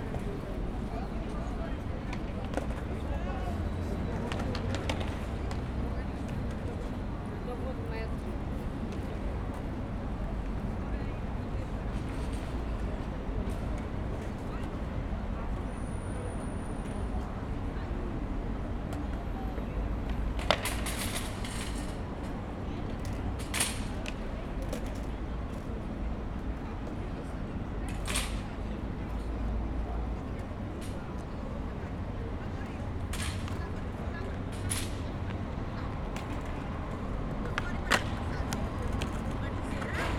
Lisbon, Pr Figueira, skaters
skaters, ridding, lisbon, traffic